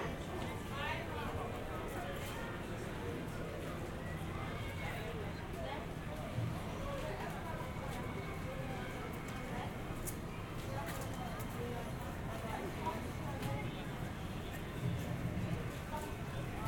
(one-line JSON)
{"title": "LegoLand, Denmark, at Lego shop", "date": "2022-04-03 16:00:00", "description": "atanding at the entrance to Lego shop in Legoland. Sennheiser Ambeo smart headset.", "latitude": "55.73", "longitude": "9.13", "altitude": "65", "timezone": "Europe/Copenhagen"}